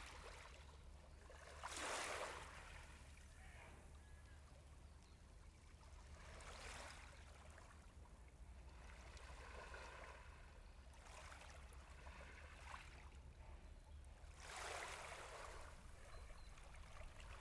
Torbay, UK - Calm Waves
Churchston Cove in Brixham. Calm waves recorded with a Tascam DR100 and DPA4060 microphones.